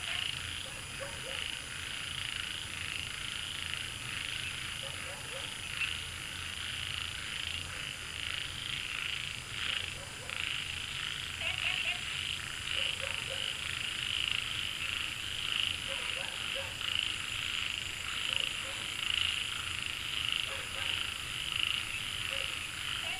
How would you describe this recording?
Frogs chirping, Dogs barking, In Wetland Park